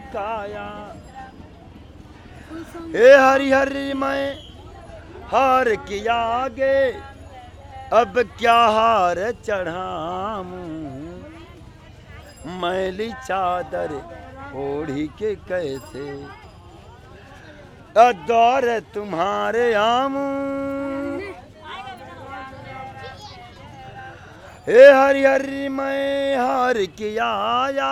Laxman Jhula, Rishikesh, Uttarakhand, Inde - Rishikesh - Aveugle
Uttarakhand, India, 12 June